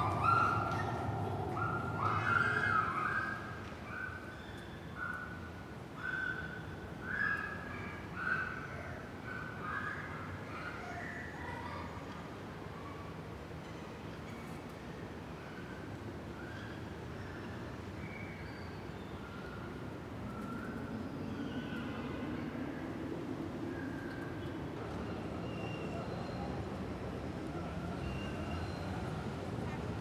Quaibrücke, Zürich, Schweiz - Komposition der Geräusche
Über uns rollen die Strassenbahnen. Eine Kinderschar kommt vorbei.
1987